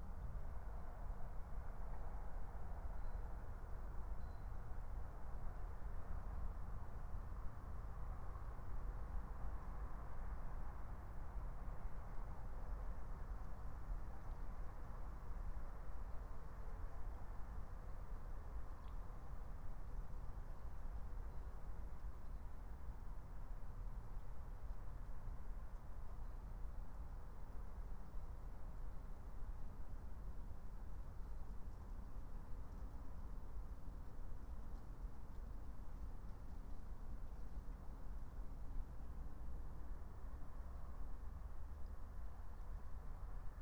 18:50 Berlin, Alt-Friedrichsfelde, Dreiecksee - train junction, pond ambience